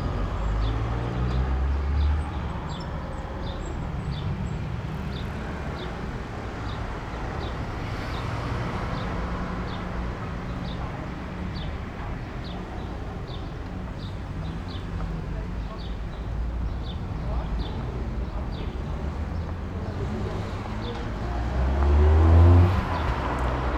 Berlin: Vermessungspunkt Friedelstraße / Maybachufer - Klangvermessung Kreuzkölln ::: 28.04.2011 ::: 09:32